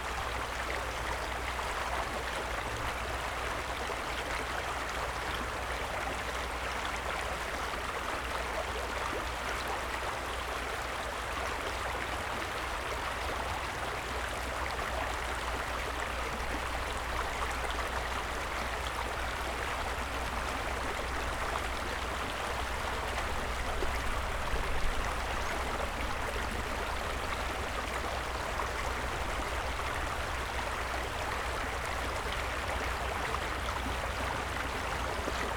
Rohrbruchpark, Berlin - river Wuhle flow
river Wuhle, walking upstream
(SD702, SL502 ORTF)
Berlin, Germany, 9 March 2018